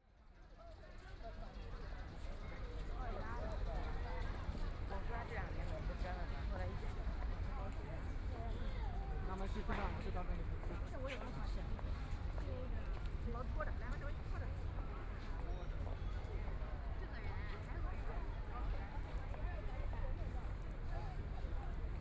People's Square park, Huangpu District - walking in the park
walking in the park, Toward the exit of the park, Binaural recording, Zoom H6+ Soundman OKM II